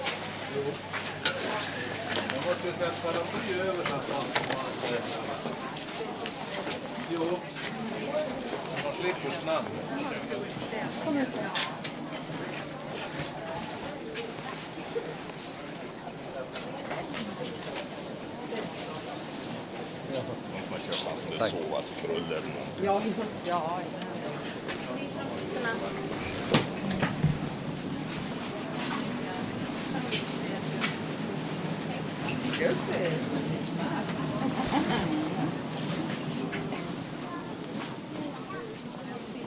Gammlia, Umeå, Winter Fayre
Soundwalk through the stalls at the winter fayre, horse-drawn cart with bells, children, people greeting, brushing winter clothing. Temperature -11 degrees